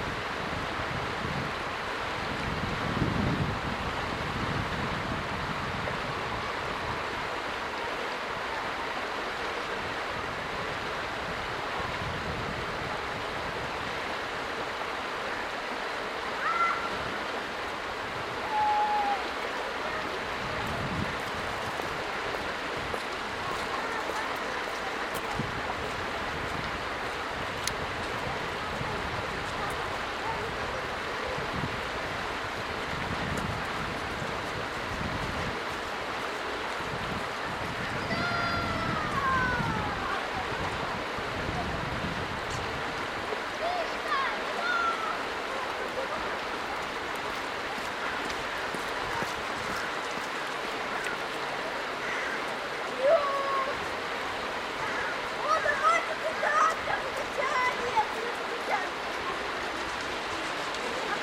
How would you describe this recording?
On the bridge over the Lososinka river. You can hear the water gurgling, children talking and shouting, someone passes by, at the end you can hear the voices of adults, then a man shakes the snow from children's sledges.